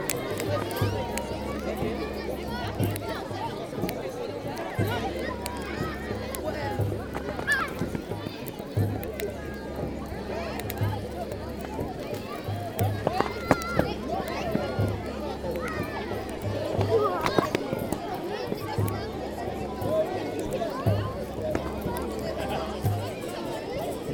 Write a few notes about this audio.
Into the Steiner school, people are celebrating the Saint John's Eve fire. Extremely important moment in 3:30 mn, very young children jump over the fire, in aim to burn some bad moments or their life, it's a precious gesture of purification.